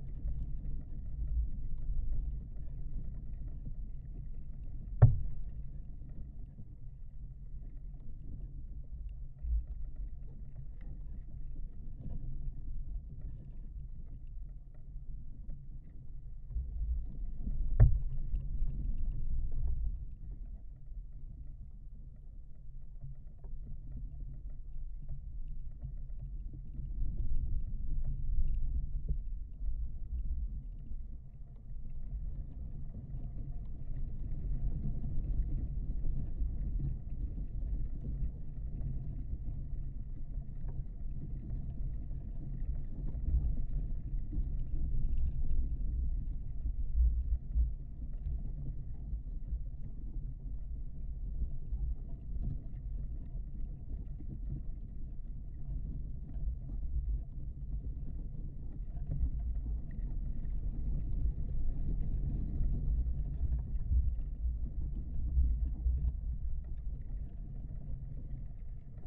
Kimbartiškė, Lithuania, tree over fortification
another German WWI fortification bunker. some half fallen trees over it. contact microphone recording
29 February 2020, 12:30